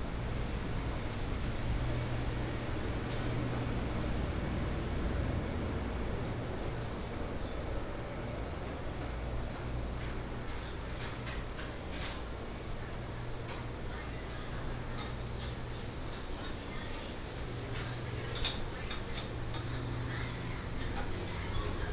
信維市場, Da’an Dist., Taipei City - Old traditional market
Old traditional market, In the ground floor of the entire building